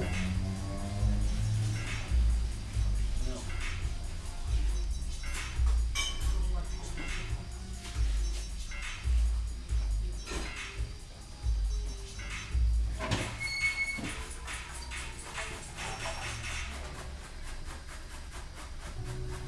Av. Ecuador, Valparaíso, Chile - ANCORA cultural space, preparations for lunch
ANCORA, cultural space and gathering point for the Tsonami festival, people preparing food, ambience
(Son PCM D50, DPA4060)